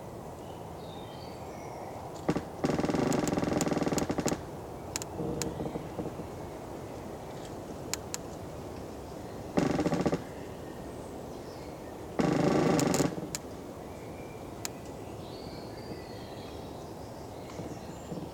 Lithuania, half fallen tree
half fallen tree loaning on other tree
Utenos apskritis, Lietuva, 18 April